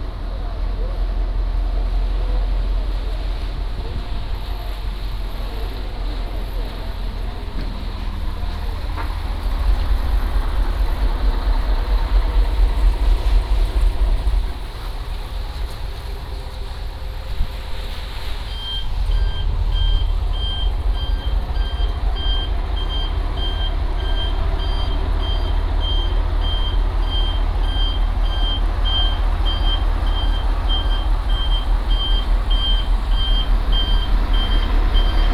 Guangfu Station, Guangfu Township - Refurbishment of the station
Traffic Sound, The station is being renovated